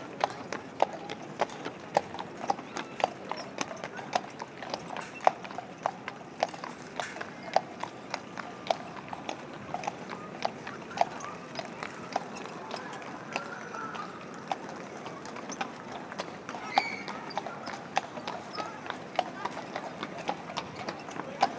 {"title": "Amsterdam, Nederlands - Horses on the central square of Amsterdam", "date": "2019-03-28 12:30:00", "description": "On the central square of Amsterdam, Horses and carriage, tourists shouting and joking.", "latitude": "52.37", "longitude": "4.89", "altitude": "3", "timezone": "Europe/Amsterdam"}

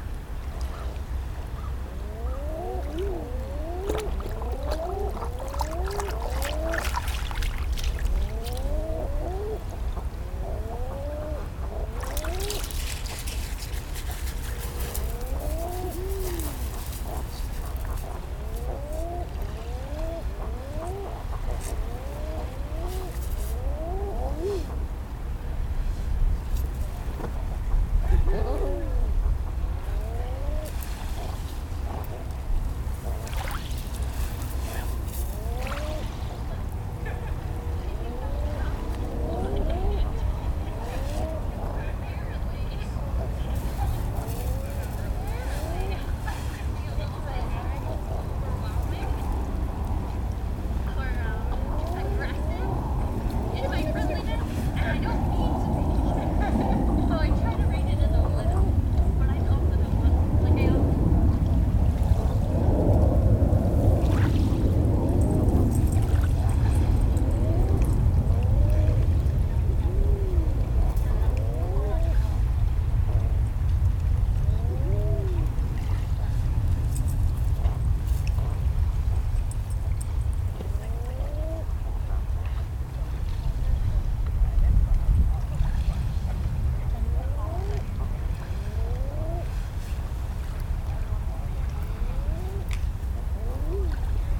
{"title": "Mackworth Island Trail, Falmouth, ME, USA - Common Eiders in a cove", "date": "2021-04-19 16:00:00", "description": "5 Common Eider couples hanging out in a cove, calling to each other and squabbling occasionally. It's afternoon rush hour on a beautiful sunny Monday in Maine. You can hear constant, low-level hum of traffic from I-95 in the distance as well as walkers on the nearby trail and an airplane passing overhead around 1:25. Rhoda the puppy playing in the sand and jingling her collar.\nRecorded with an Olypus LS-10 and LOM mikroUši", "latitude": "43.69", "longitude": "-70.23", "altitude": "19", "timezone": "America/New_York"}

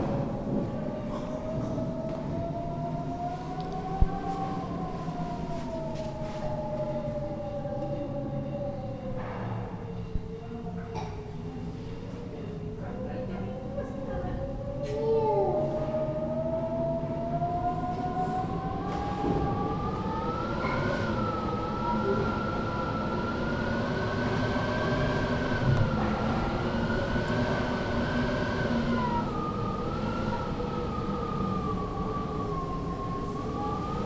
{"title": "Nova rise, monastery", "date": "2011-08-13 15:00:00", "description": "draft in the monstery of the Nova Rise interiour", "latitude": "49.14", "longitude": "15.57", "altitude": "544", "timezone": "Europe/Prague"}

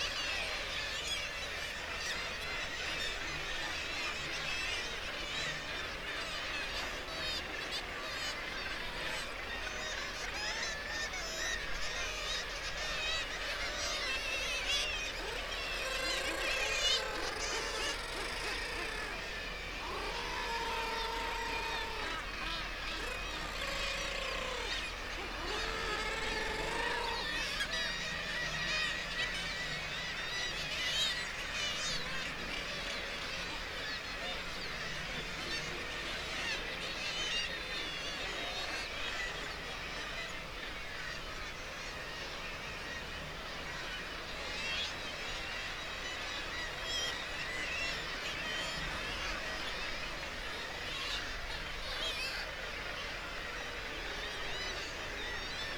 {"title": "Bempton, UK - Kittiwake soundscape ...", "date": "2016-07-22 05:11:00", "description": "Kittiwake soundscape ... RSPB Bempton Cliffs ... kittiwake calls and flight calls ... guillemot and gannet calls ... open lavaliers on the end of a fishing landing net pole ... warm ... sunny morning ...", "latitude": "54.15", "longitude": "-0.17", "altitude": "57", "timezone": "Europe/London"}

